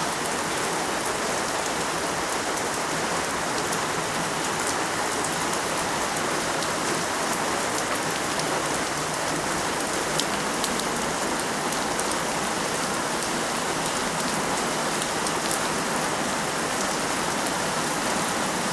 Exit from the house: heavy rain in the courtyard. sounds of water on the stones, gutter, shelter. Then enter in the house again.
Pavia, Italy, 27 October, 21:05